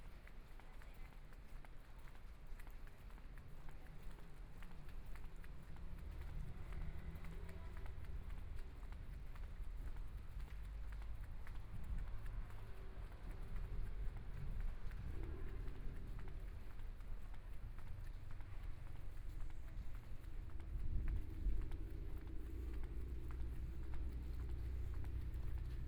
Xinxing Rd., Taipei City - soundwalk
walking on the road, Traffic Sound, Rainy days, Clammy cloudy, Binaural recordings, Zoom H4n+ Soundman OKM II